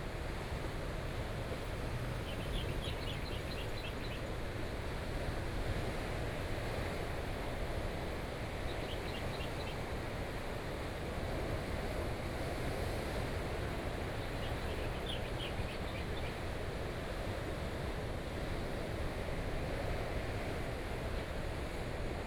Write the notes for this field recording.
On the coast, Sound of the waves, Birdsong, Traffic Sound, Very hot weather, Sony PCM D50+ Soundman OKM II